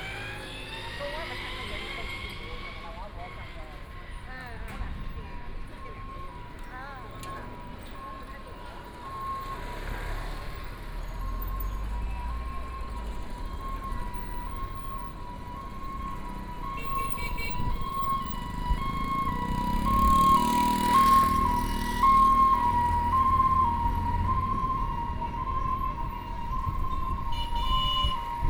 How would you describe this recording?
Ambulance voice, Various sounds on the street, Traffic Sound, Bicycle brake sound, Trumpet, Brakes sound, Footsteps, Bicycle Sound, Motor vehicle sound, Binaural recording, Zoom H6+ Soundman OKM II